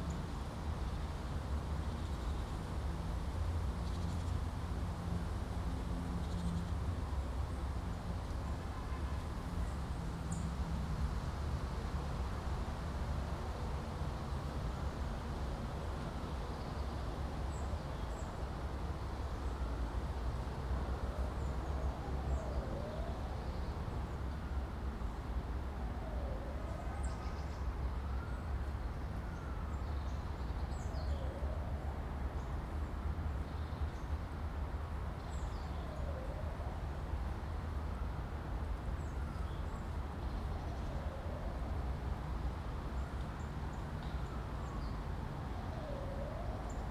Мемориальный комплекс Бабий Яр.Пение птиц и шум улицы